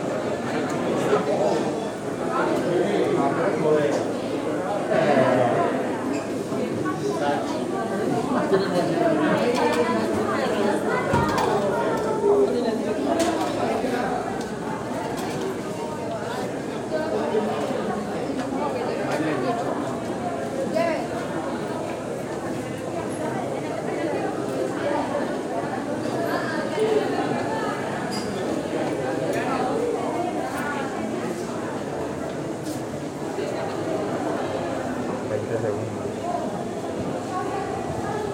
Sonido ambiente en el piso 1 del bloque 15 de la Universidad de Medellín en la Facultad de derecho, se escuchan voces, pasos, golpes en barandas metálicas. También se escuchaban los estudiantes dirigiéndose a sus salones para las clases de las 10 am.
Coordenadas: 6°13'56.1"N+75°36'37.0"W
Sonido tónico: voces hablando, pasos.
Señales sonoras: chillido de los zapatos, golpes en barandas metalica.
Grabado a la altura de 1.60 metros
Tiempo de audio: 3 minutos con 49 segundos.
Grabado por Stiven López, Isabel Mendoza, Juan José González y Manuela Gallego con micrófono de celular estéreo.